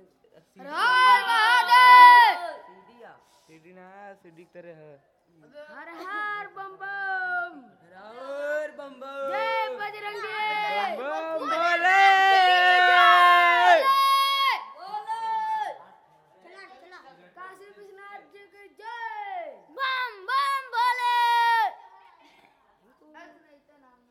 Ghats of Varanasi, Ghasi Tola, Varanasi, Uttar Pradesh, Inde - Benares - Varanasi
Benares
Prière du soir.